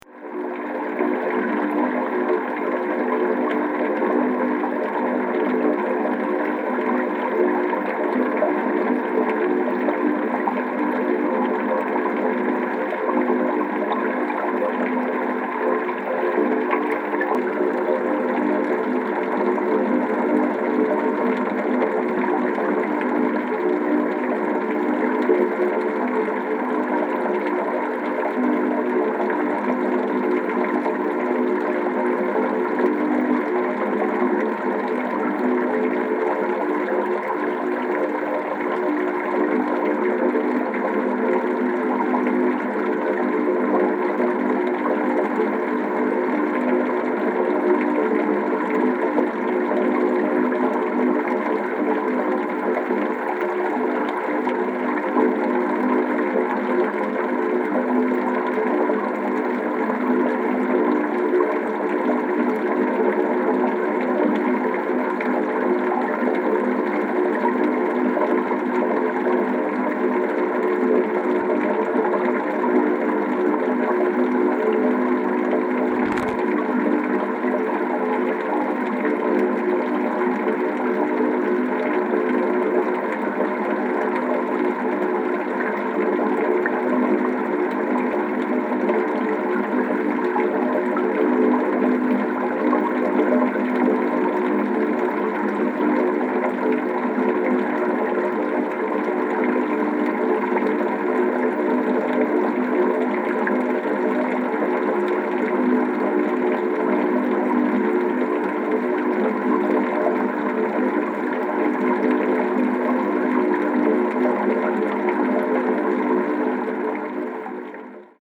Singing Fountain, Prague Castle
The singing fountain at Belveder within Prague Castle was cast in the 1560s from bronze mixed with bell metal. The water jets produce a complex of tones that are best heard by ear from underneath. This recording was made by a hydrophone in the fountain pool.